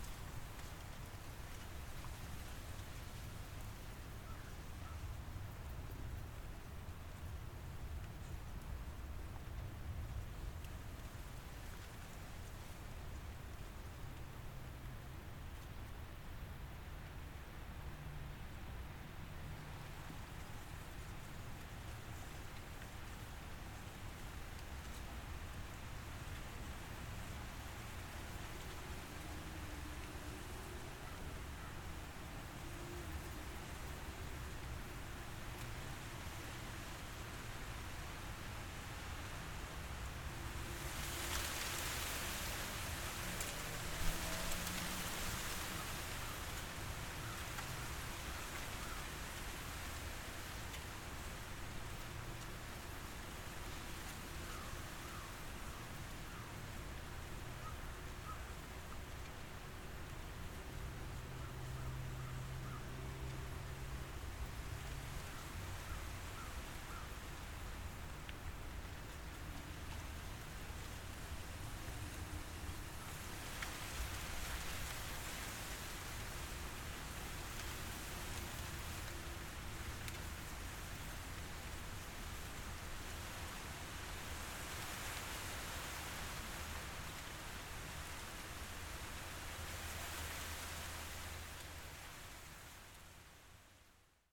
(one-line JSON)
{"title": "Warren Landing Rd, Garrison, NY, USA - Wind, Reeds and Birds", "date": "2020-09-19 17:50:00", "description": "Constitution Marsh Audubon Center and Sanctuary.\nSound of reeds, wind, and birds.\nZoom h6", "latitude": "41.40", "longitude": "-73.94", "altitude": "2", "timezone": "America/New_York"}